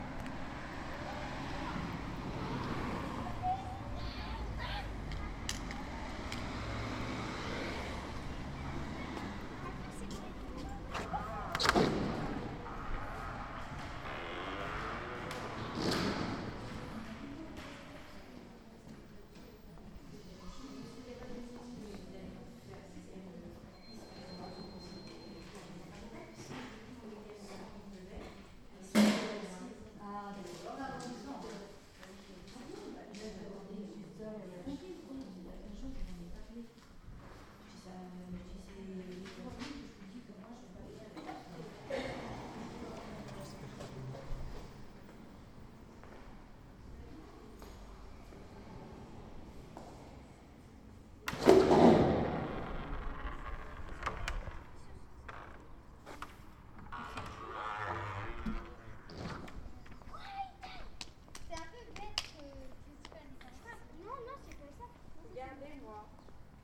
Saint-Brieuc, France - in and out of the Villa Carmélie Music center
In and Out of the music, dance and art center's entrance hall.with a bizarre door sound. Children waiting outside are chatting, a piano melody comes out of one of the windows, merging with cars and city sounds.